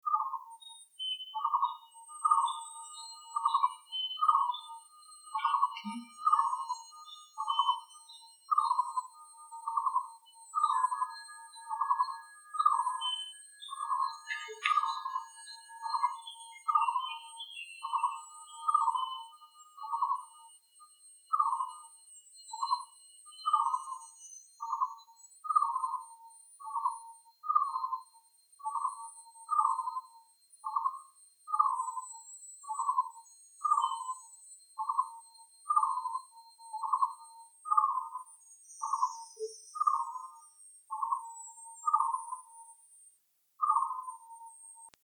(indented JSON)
{
  "title": "bird in NCTU, playaround soundscape - Strange bird in NCTU Campus, playaround soundscape",
  "description": "This piece is my first practice in the Soundscape course conducted by sound artist Liu, Pei-wen in Playaround workshop, 2008.",
  "latitude": "24.79",
  "longitude": "121.00",
  "altitude": "87",
  "timezone": "GMT+1"
}